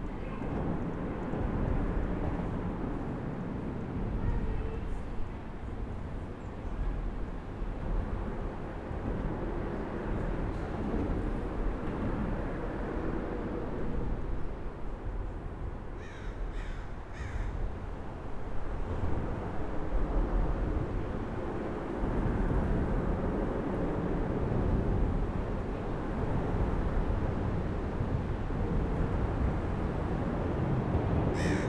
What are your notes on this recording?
Under St Johns Bridge, parking lot, midafternoon, crow, recorder on car hood, 3 folks present